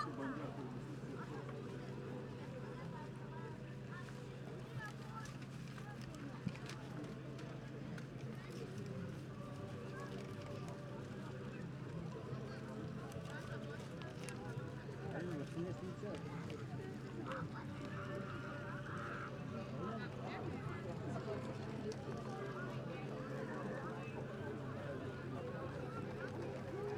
Lithuania, Kernave, Festival of Experimental Archaeology
18th International Festival of Experimental Archaeology „DAYS OF LIVE ARCHAEOLOGY IN KERNAVĖ“